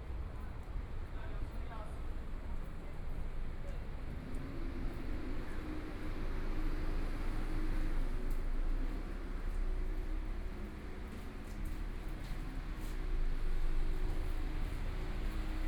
中山區中山里, Taipei City - Small street
Walking through the small streets, Old ranch house in a residential area
Please turn up the volume a little. Binaural recordings, Sony PCM D100+ Soundman OKM II